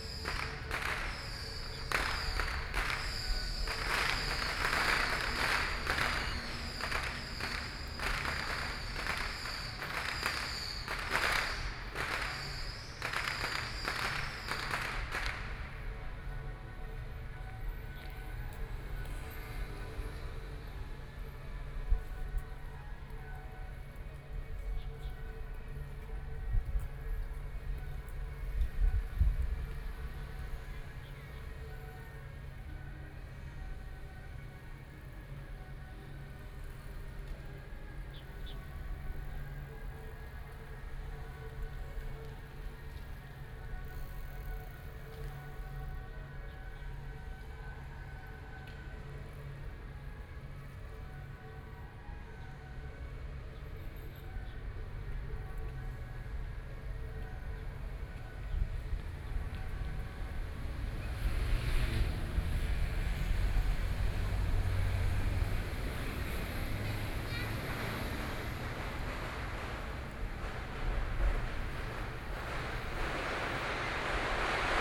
Yancheng, Kaohsiung - Traditional temple festivals
Traditional temple festivals, Firework, Local traditional performing groups, Sony PCM D50 + Soundman OKM II
高雄市 (Kaohsiung City), 中華民國, April 2013